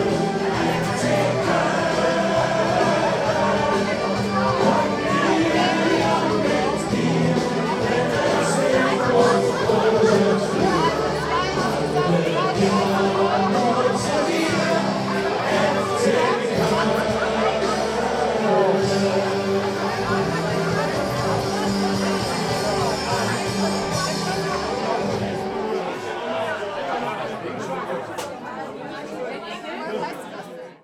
köln, alcazar - fc köln anthem
at this place, cologne carnival survives the whole year. what you hear is the hymn of cologne soccer club fc köln.
Cologne, Germany